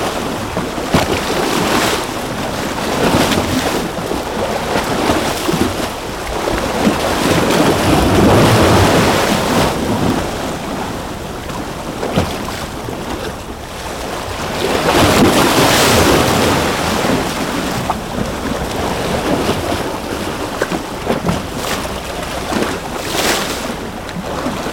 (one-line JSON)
{"title": "Muelle, Veracruz - Waves crashing on the rocks in Veracruz", "date": "2017-11-18 17:30:00", "description": "Waves crashing on some concrete rocks at the entrance of the port of Veracruz (Mexico). Microphones very close from the water.", "latitude": "19.20", "longitude": "-96.12", "altitude": "1", "timezone": "America/Mexico_City"}